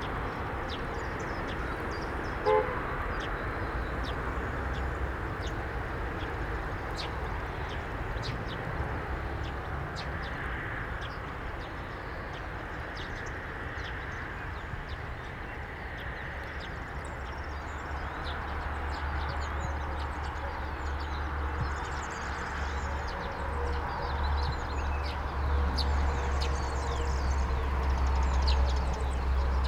Weimar, Deutschland - NordWestPunkt
SeaM (Studio fuer elektroakustische Musik) klangorte - NordWestPunkt